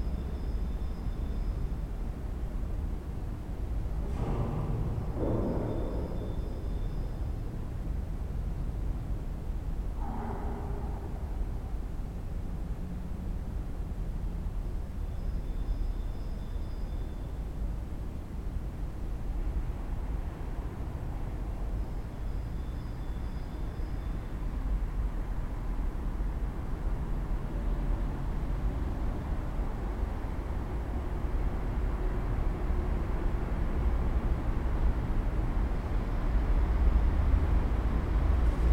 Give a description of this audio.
Kostel sv. Jana Nepomuckého Na Skalce byl kdysi založen jako kaplička na původní vinici Skalka. V roce 1691 ji založil na dolním konci Karlova náměstí mniši z nedalekého kláštera Na Slovanech. V roce 1706 bylo při kapli ustanoveno bratrstvo pod ochranou Panny Marie ke cti Jana Nepomuckého, svatořečeného v roce 1729.